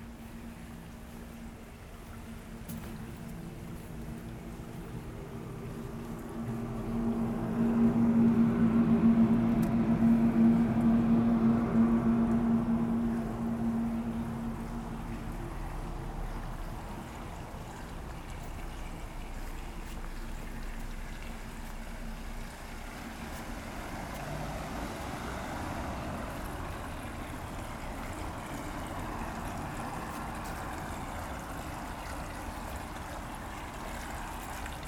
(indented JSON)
{
  "title": "La Rochefoucauld, Paris, France - Street cleaning",
  "date": "2016-09-24 08:50:00",
  "description": "People are cleaning street, early on the morning. Water is flowing everywhere from drains.",
  "latitude": "48.88",
  "longitude": "2.33",
  "altitude": "53",
  "timezone": "Europe/Paris"
}